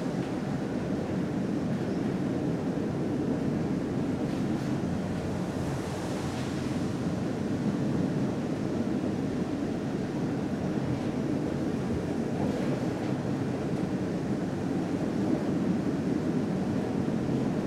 Chorzów, Poland: With 'Tramwaj 19' from Bytom to Katowice - Tramwaj 19 from Bytom to Katowice
Sequence of a journey with 'Tramwaj 19' from Bytom to Katowice, past peri-urban brownfield sites and along humming traffic arteries of the Upper Silesian Industrial Region. The tram itself couldn't be more regional: a 'Konstal 105Na', manufactured from 1979 to 1992 in Chorzów's Konstal factories.
Recorded with binaural microphones.